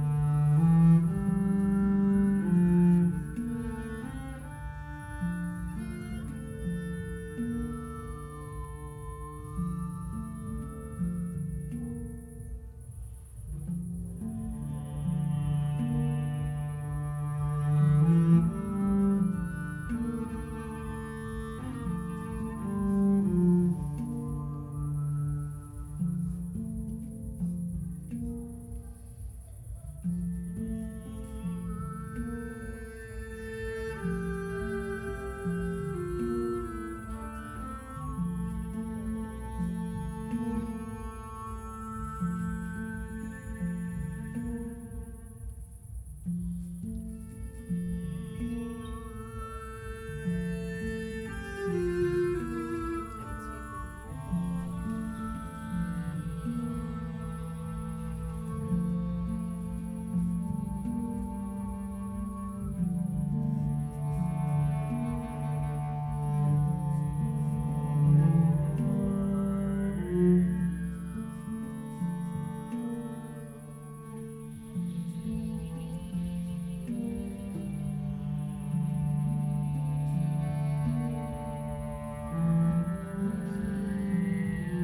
Kienitz, Letschin, Kirchencafe - Tempeljazz, concert
stepping inside the church, listening to a concert by Manfred Sperling, Papasax and Nikolas Fahy, remarkable sounds of a Hang, a new percussive instrument
(Sony PCM D50, DPA4060)